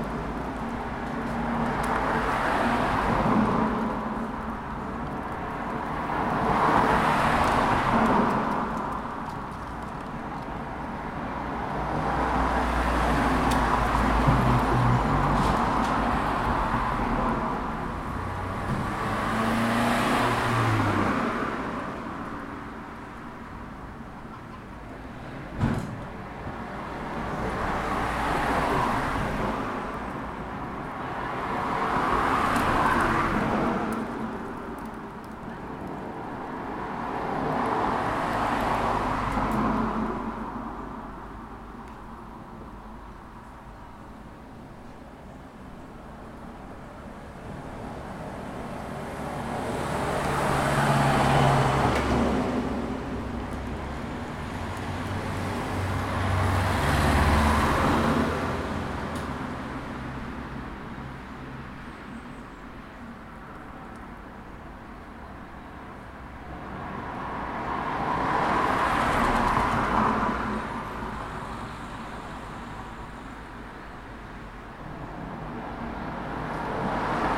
Rednal, Birmingham, UK - Lickey Hills (outside)
Recorded at a bus stop not far from Lickey Hills Country Park with a Zoom H4N.